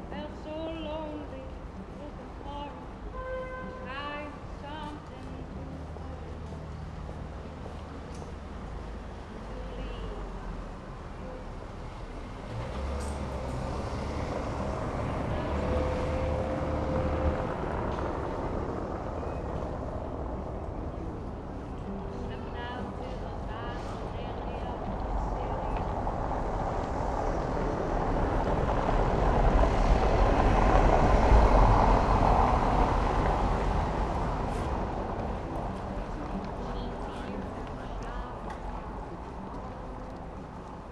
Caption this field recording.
no longer a turkish restaurant, since the owner changed recently. in front of the cafe, listening to the saturday afternoon ambience, a singer, visitors of the new weekend market, neigbours, cars. a bright autumn day.